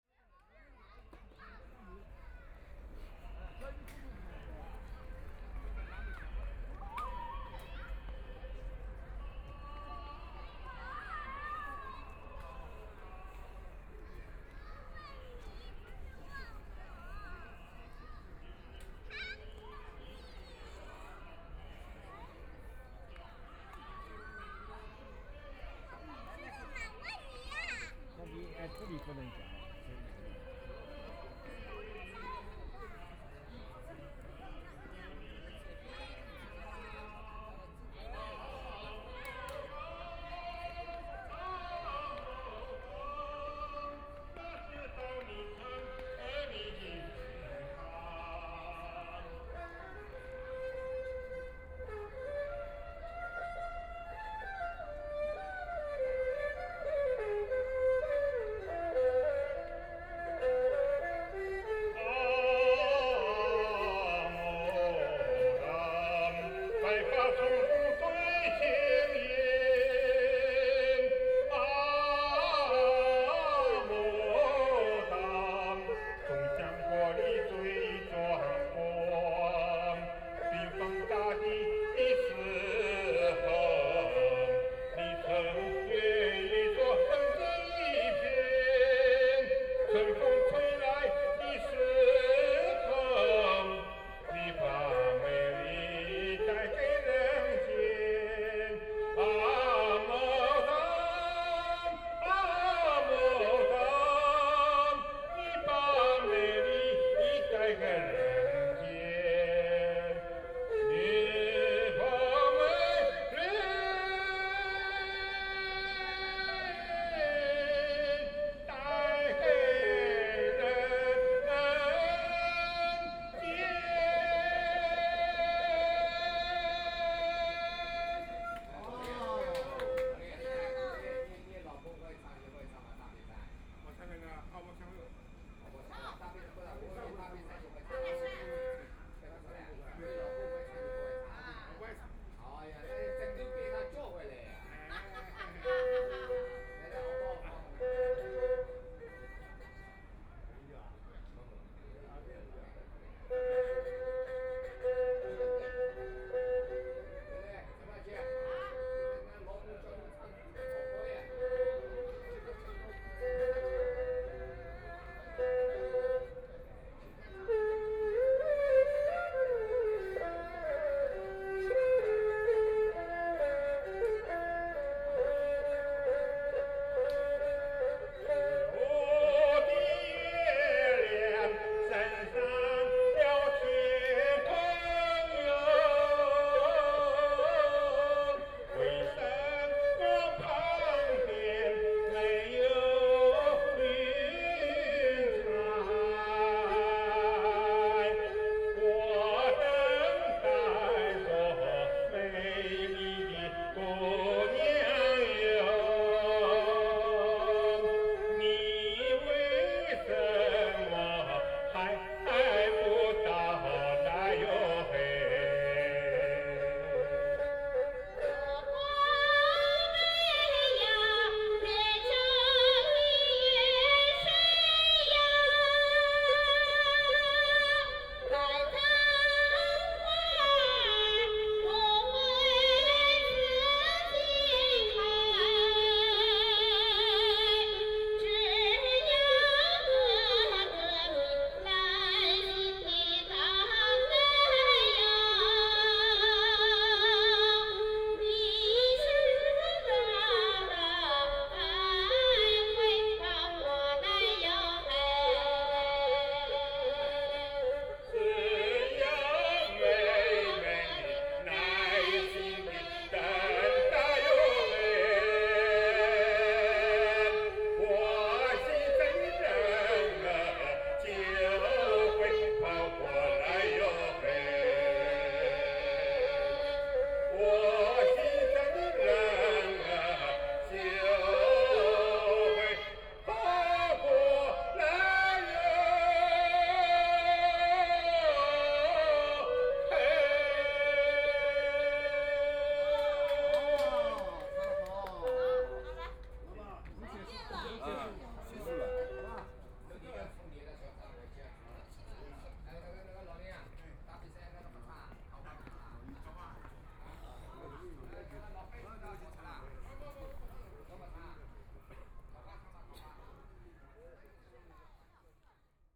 Penglai Park, Shanghai - singing
Walking through the park, A middle-aged are singing, Binaural recording, Zoom H6+ Soundman OKM II
26 November 2013, 16:58